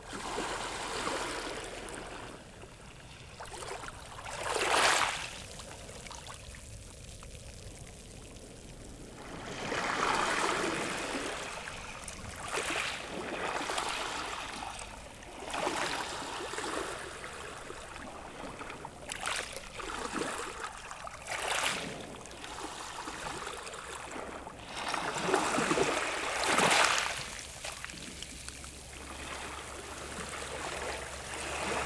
Criel-sur-Mer, France - The sea at Criel beach
Sound of the sea, with waves lapping on the pebbles, at the quiet Criel beach.
3 November 2017, 10:00